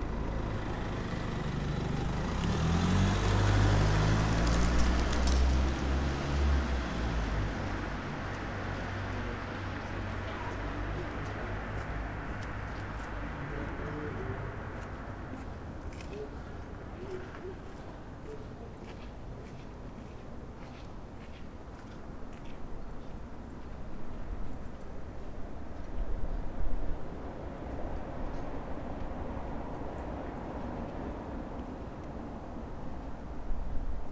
Berlin: Vermessungspunkt Maybachufer / Bürknerstraße - Klangvermessung Kreuzkölln ::: 19.02.2011 ::: 03:44
Berlin, Germany, 19 February 2011